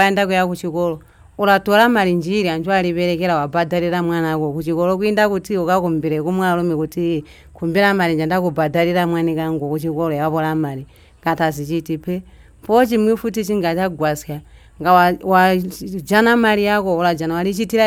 Binga Craft Centre, Binga, Zimbabwe - Barbara Mudimba - I'm a producer...
We are together with Barbara Mudimba and the sales assistant, Viola Mwembe at the Craft Centre in Binga. Viola translates from the ChiTonga. Barbara is a woman from Kariangwe, a village in the Binga district. She started weaving baskets as a means of survival, providing for herself and her family. Here, she tells us about what it means to her being a creative producer.
Barbara used to belong to a club of women basket-weavers in Kariangwe.